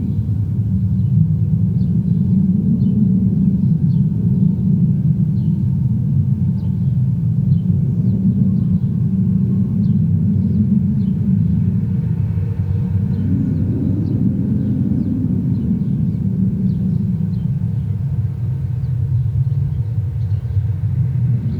Waaw Centre for Art and Design, Saint Louis, Senegal - Contact Mics on Wire Fence
Stereo contact mics attached to wire fence on rooftop of Waaw Centre for Art and Design. Contact mics by Jez Riley French, recorded on Zoom H4 recorder.